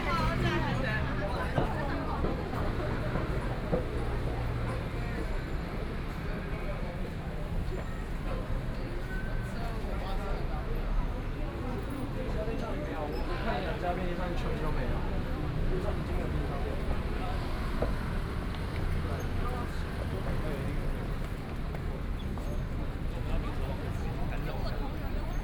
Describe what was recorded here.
Walking out of the station, Binaural recordings, Sony PCM D100 + Soundman OKM II